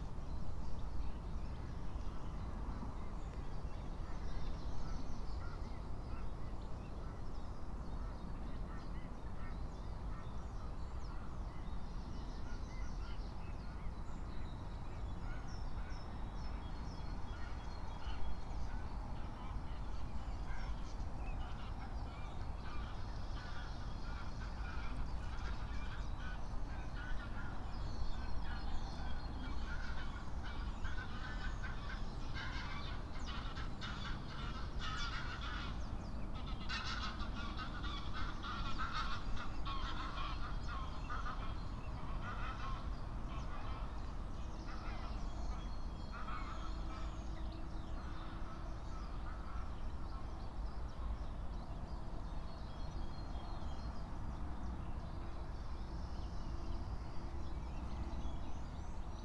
07:00 Berlin Buch, Lietzengraben - wetland ambience
15 April 2022, 07:00